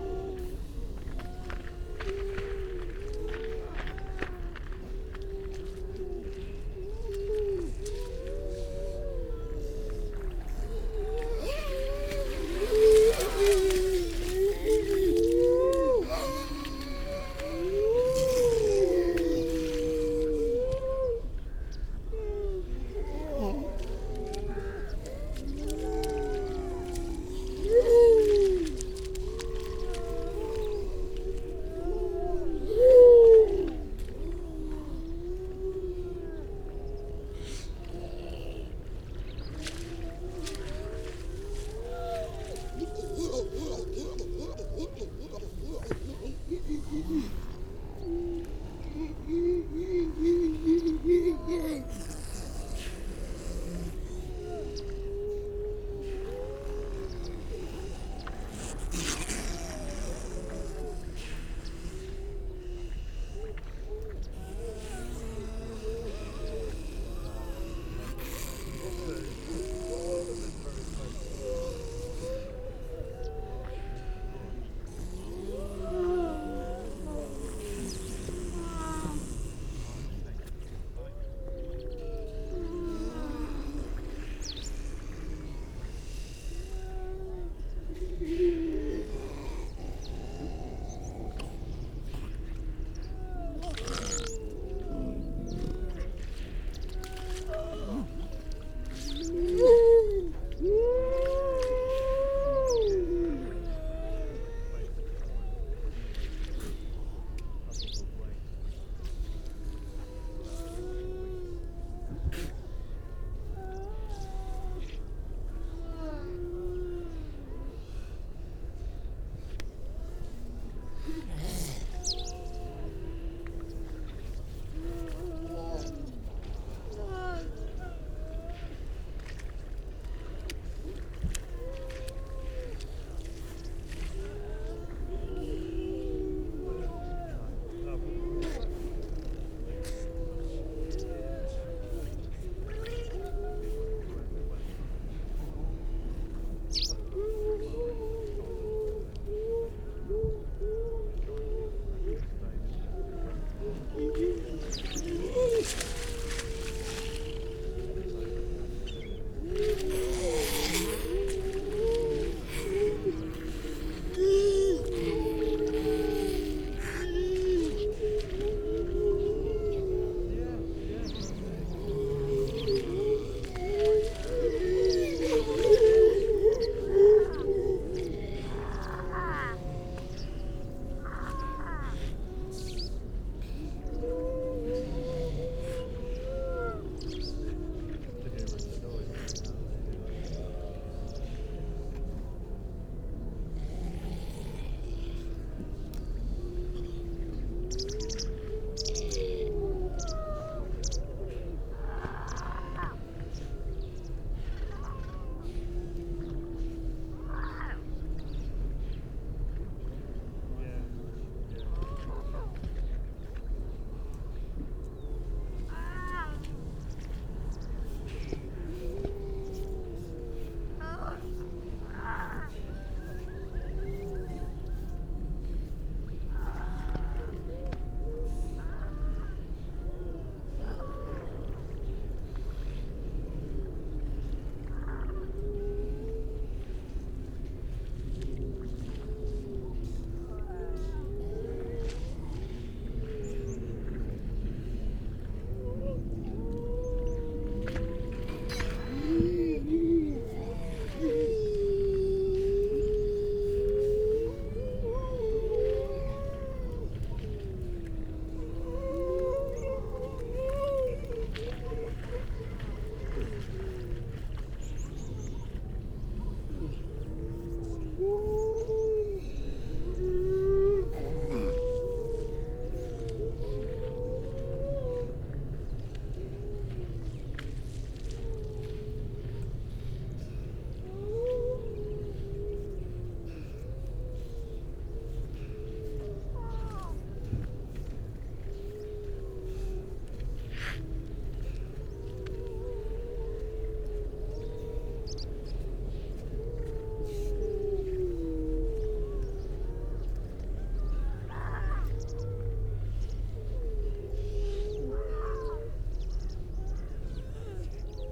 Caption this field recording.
grey seals soundscape ... generally females and pups ... area of salt marsh where the grey seals come to give birth oct - dec ... parabolic ... bird calls ... pied wagtail ... dunnock ... crow ... pipit ... redshank ... starling ... curlew ... all sorts of background noise ...